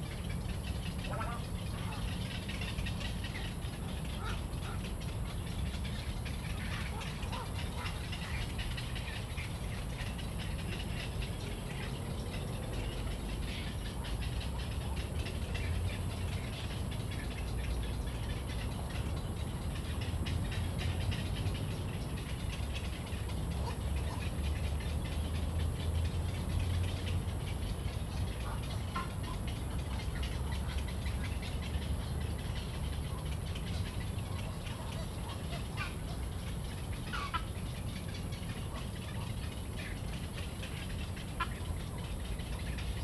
大安森林公園, 大安區 Taipei City - Bird calls
Bird calls, in the Park, Traffic noise, Ecological pool
Zoom H2n MS+XY
Taipei City, Taiwan